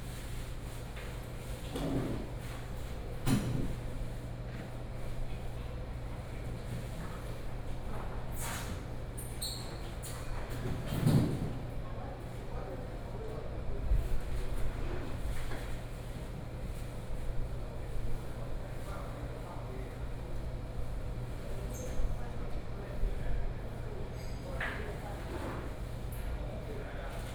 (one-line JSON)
{"title": "將軍漁港海鮮魚市, Jiangjun Dist., Tainan City - Seafood fish market", "date": "2018-05-08 15:47:00", "description": "In the Seafood fish market, Birds sound, Finishing the goods", "latitude": "23.21", "longitude": "120.09", "altitude": "2", "timezone": "Asia/Taipei"}